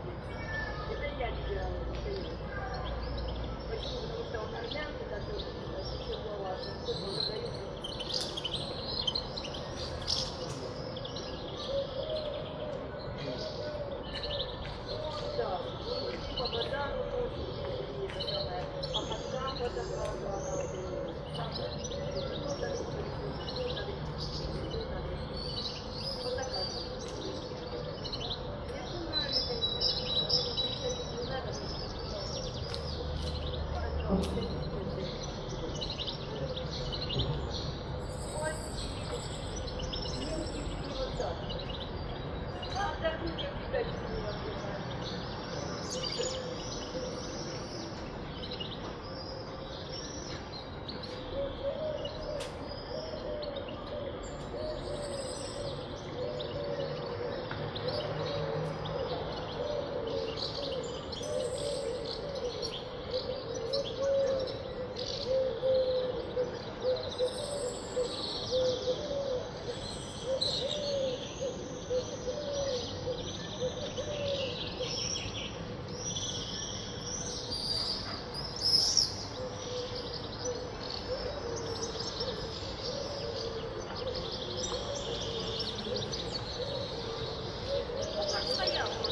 вулиця Трудова, Костянтинівка, Донецька область, Украина - Майское утро
Утро в спальном районе: голоса ранних прохожих, щебет птиц и звуки автомобилей